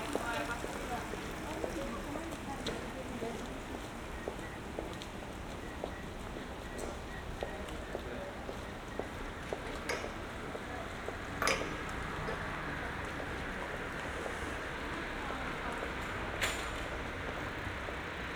Berlin: Vermessungspunkt Friedelstraße / Maybachufer - Klangvermessung Kreuzkölln ::: 06.10.2012 ::: 02:16